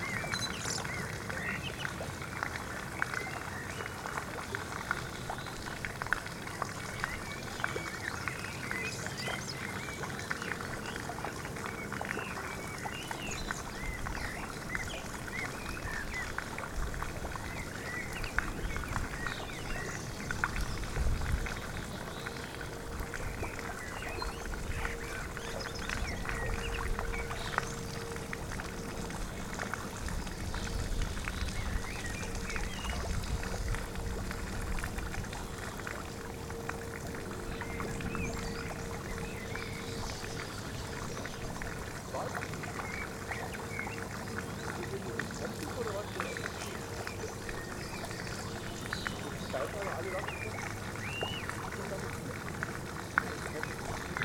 Bayreuth, Deutschland, Eremitage - Eremitage
Little fountain at the "Erimitage Kanalgarten"